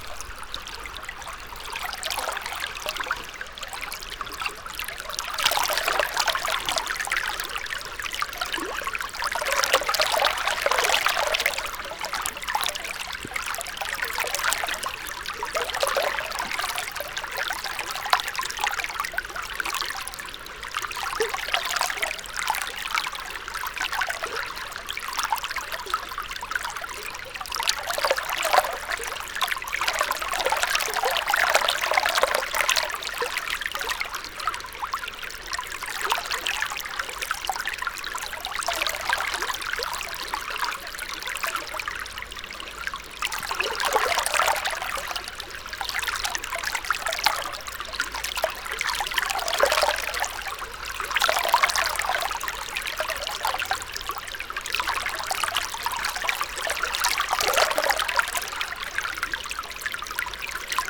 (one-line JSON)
{"title": "river Drava, Loka - stone and river", "date": "2015-05-31 16:05:00", "description": "new (from winter 2015) artificial water canal in the old river bed, rapids flow, river waves", "latitude": "46.48", "longitude": "15.76", "altitude": "233", "timezone": "Europe/Ljubljana"}